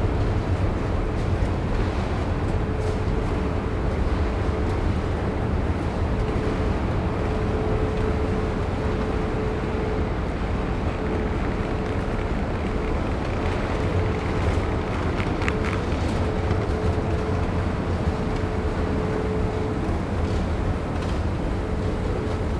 Fieldrecording by Laura Loaspio
zoom H4n
Documentaire one-take fieldrecording doorheen de voetgangerstunnel van Antwerpen:
van rechteroever (de stad) naar linkeroever (buiten de stad) van Antwerpen. Opgenomen op een warme dag in April waardoor er heel veel fietsende toeristen richting de stad trokken. Interessant aan deze plaats zijn de oer oude houten roltrappen die nog net klinken zoals vroeger omdat ze niet worden beïnvloed door geluiden van buitenaf en anderzijds de specifieke akoestiek van deze tunnel.